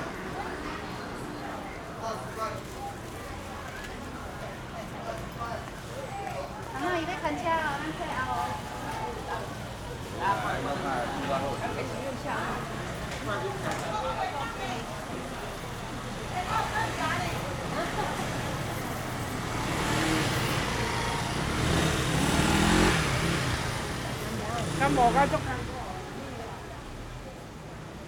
Datong S. Rd., Sanchong Dist., New Taipei City - Walking through the traditional market
Walking through the traditional market
Zoom H4n +Rode NT4